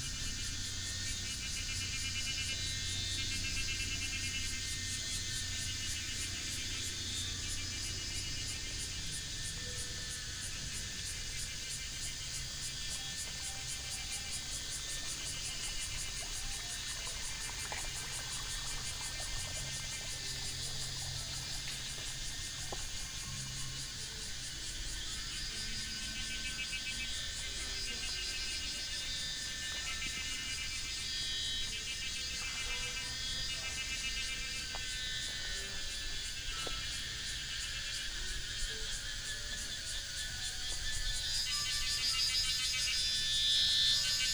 Paper Dome, 桃米里 Nantou County - Walk along the path
Walk along the path, Brook, Cicada sounds
Puli Township, 桃米巷52-12號, June 2015